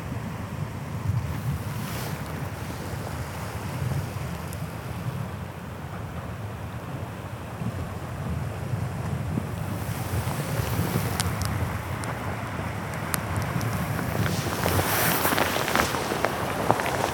Sea splashing on the beach covered full of snow.(-; and walking on snow.